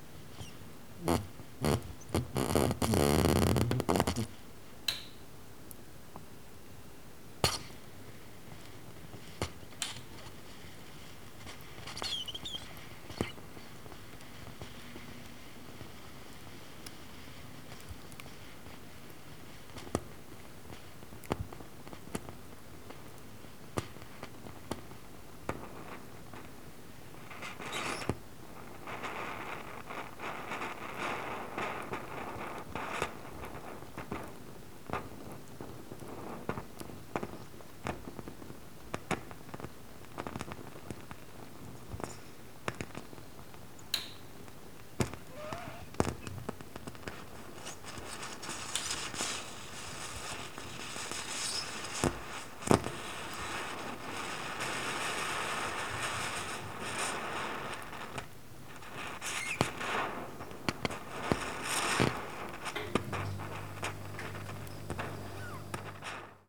again intrigued how different meals sound while cooking. oatmeal. mush keeps puffing with sharp bursts as the air sacks are released.